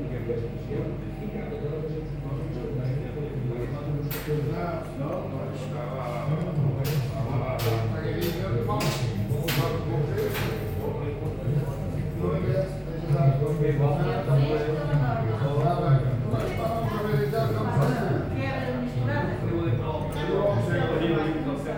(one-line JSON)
{"title": "Barca de Alva, Portugal", "date": "2012-03-26 11:40:00", "description": "Gravacao binaural em Barca de Alva. Mapa Sonoro do Rio Douro Binaural recording in Baraca de Alva, Portugal. Douro River Sound Map", "latitude": "41.03", "longitude": "-6.94", "altitude": "138", "timezone": "Europe/Lisbon"}